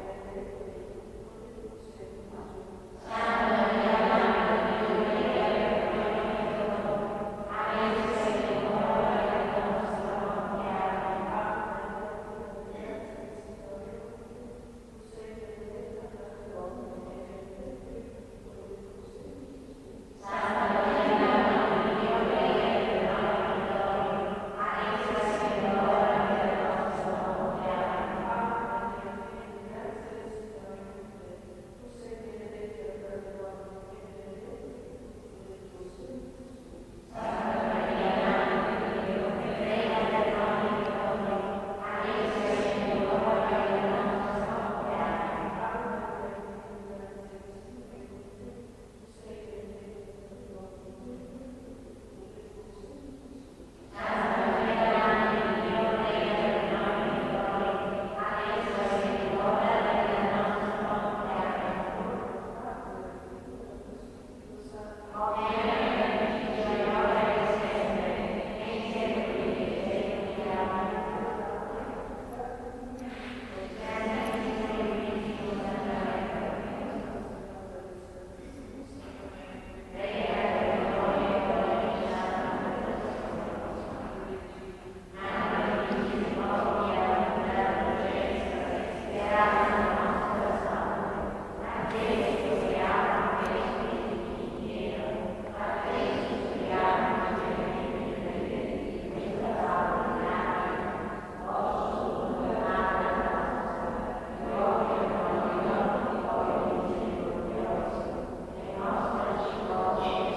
Catania, IT, San Benedetto - Nuns praying
The perpetual prayers to the Blessed Sacrament of the Benedictine nuns of San Benedetto.
Tascam RD-2d, internal mics.
Sicily, Italy, 23 February, 13:00